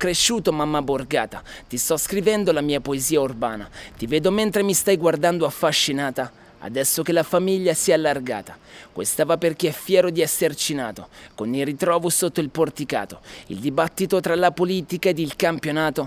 Via Fossa Cieca, Massa MS, Italia - Fino in Francia
Matteo Bondielli è Text, un rapper nato a Borgo del Ponte. Ha scritto questa lirica e l'ha recitata sotto l'arco di Porta Genova.
18 August 2017, 16:21